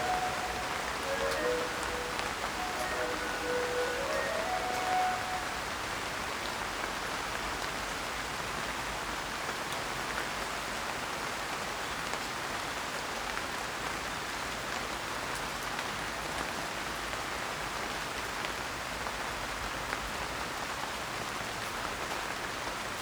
London Borough of Lewisham, Greater London, UK - Ice Cream in the Rain
Ice cream vans seem very hard working in this part of town. Heard while recording foxes and parakeets at Hither Green Cemetery
14 August 2013, 19:53